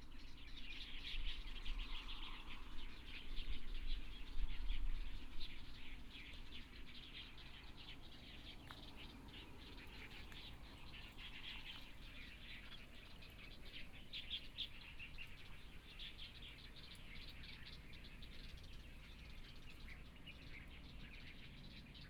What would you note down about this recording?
Traffic Sound, Birds singing, Sparrow, Binaural recordings, Zoom H4n+ Soundman OKM II ( SoundMap20140117- 5)